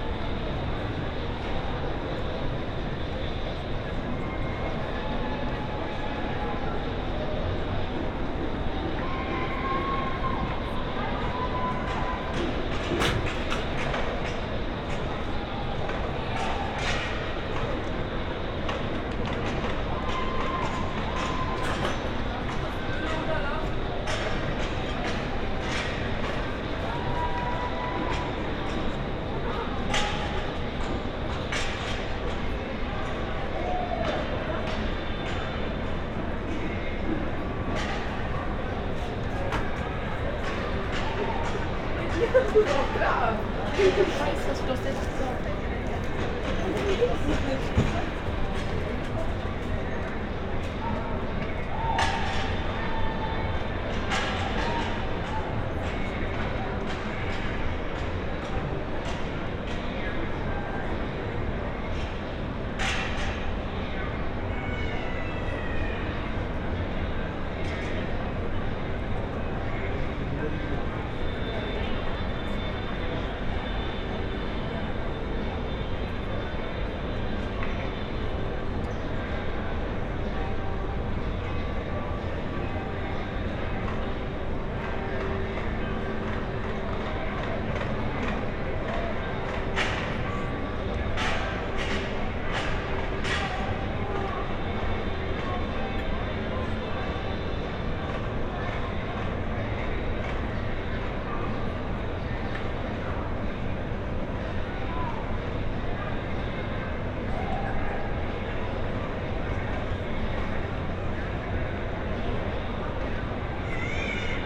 on a small staircase on the roof of the shopping mall, church bells, balkan orchestra in the pedestrian zone
the city, the country & me: september 27, 2013
Frankfurt, Germany, 27 September, 16:28